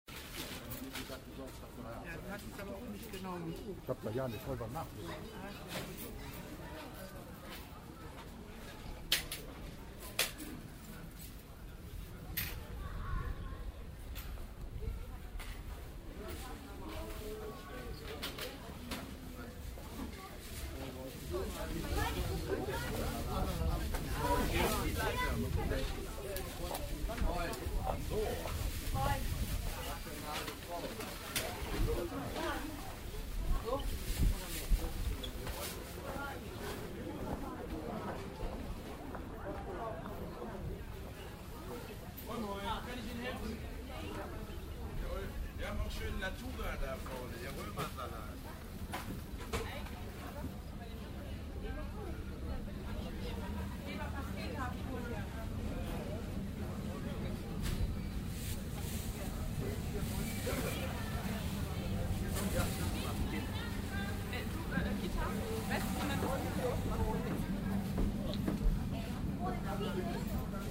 mettmann, markt
wochenmarkt morgens im frühjahr 07, gang um die kirche vorbei an diversen ständen
project: : resonanzen - neanderland - social ambiences/ listen to the people - in & outdoor nearfield recordings